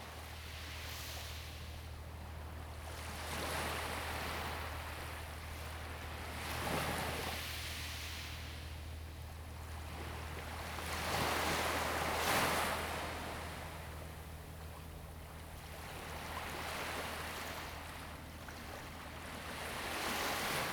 龍門沙灘, Huxi Township - At the beach
At the beach, sound of the Waves
Zoom H2n MS+XY